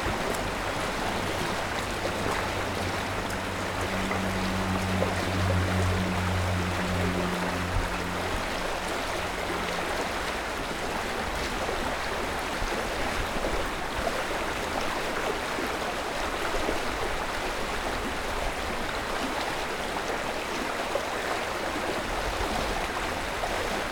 Olsztyn, Łyna, Most Św. Barbary - Lyna river